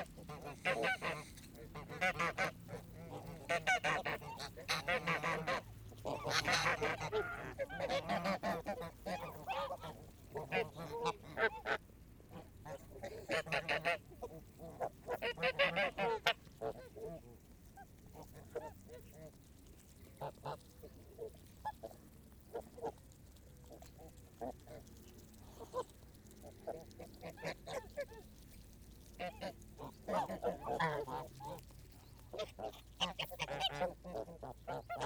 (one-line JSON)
{"title": "Ottignies-Louvain-la-Neuve, Belgique - Starved geese", "date": "2018-08-03 21:15:00", "description": "Because of heat wave, geese are famished. Birds are herbivorous, the grass is completely yellow and burned. A lady is giving grass pellets. It makes birds becoming completely crazy. I specify that a bird is taking a dump on a microphone on 2:50 mn !", "latitude": "50.67", "longitude": "4.61", "altitude": "101", "timezone": "GMT+1"}